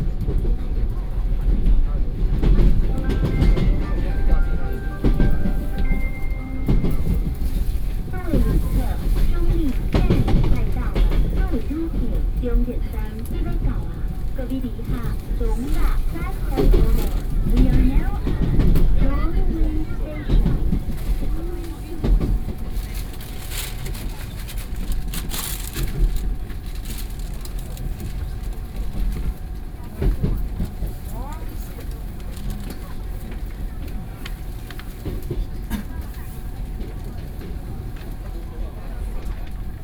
Chungli, Taoyuan - On the train